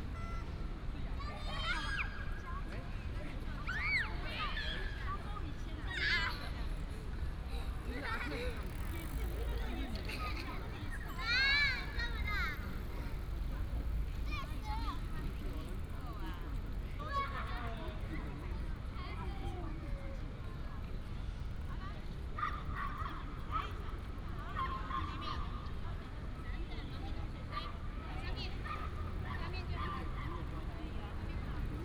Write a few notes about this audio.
In the Plaza, Traffic sound, Children